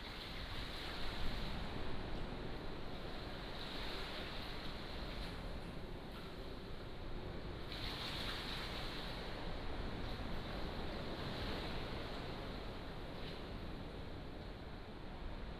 北海坑道, Nangan Township - in the Readiness tunnel
walking in the Readiness tunnel, Sound of the waves, For tourists and build a small pier
福建省 (Fujian), Mainland - Taiwan Border, October 2014